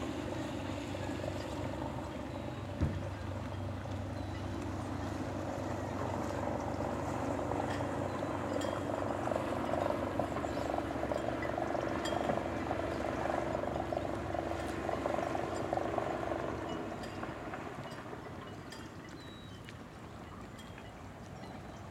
Port de Merrien, Moëlan-sur-Mer, France - Le port un matin en septembre.
Un matin dans le Finistère sud.
29 September 2013